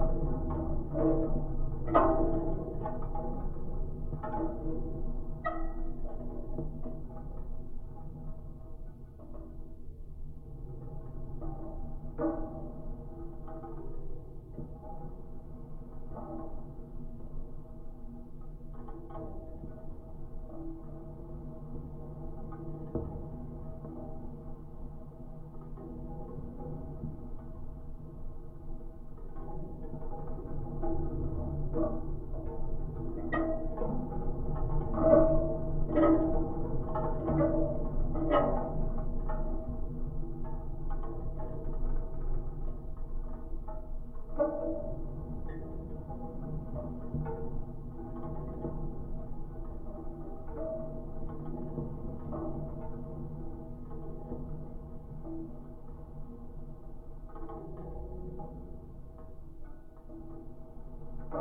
Geophone recording of wind/cinetic sculpture on Christ King Hill